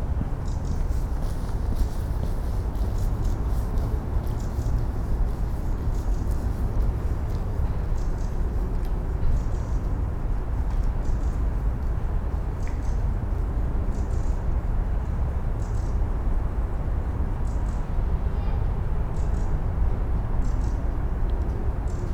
a bird chirping in the morning before sunrise. dense noise of the waking city. mother with kids passing by. (roland r-07)
17 December 2019, 07:53